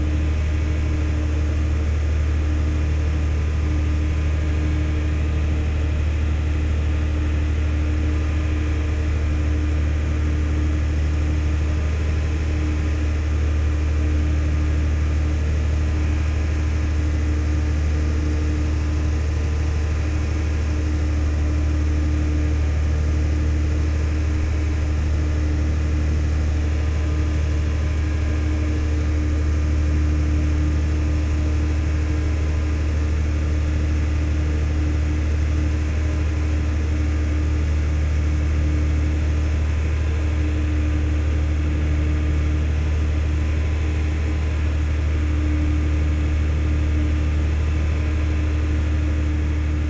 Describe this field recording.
This is the biggest dump of Belgium. Here is a station, dealing with biogas.